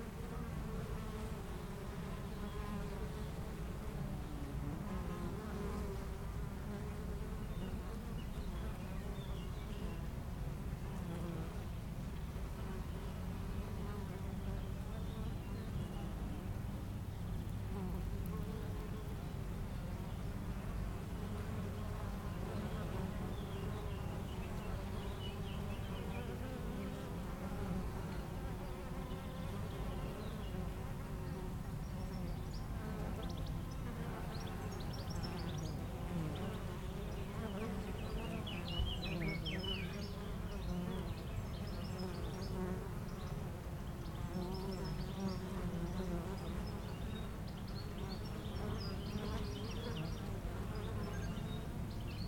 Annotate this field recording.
Entre deux ruches du rucher du jardin vagabond à Aix-les-bains les abeilles sont en pleine collecte et font d'incessants aller retour vers les fleurs, quelques oiseaux dans le bois voisin.